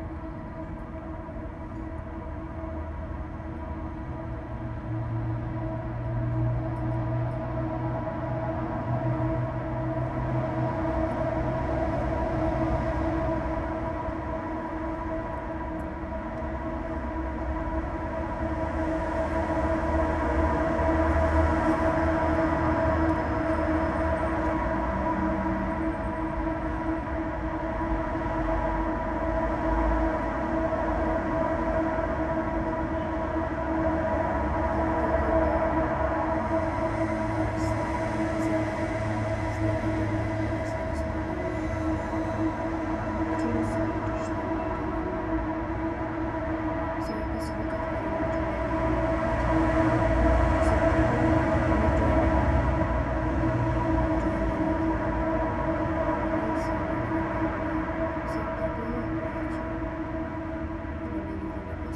Husitská, Prague - trains and traffic in a tube
trains, street traffic and a gentle voice heard within a railing tube. recorded during the Sounds of Europe radio spaces workshop.
(SD702, DPA4060)